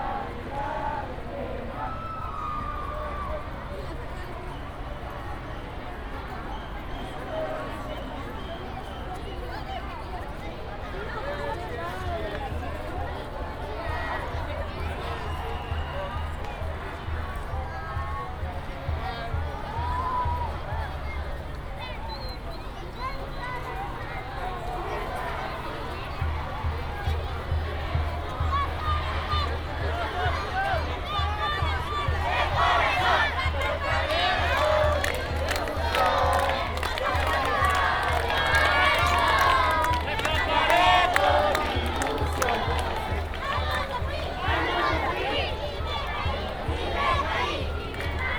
Working on our (O+A) installation "Resonating Mexico City we encountered a Boy Scouts Parade next to our venue at Laboratorio Arte Alameda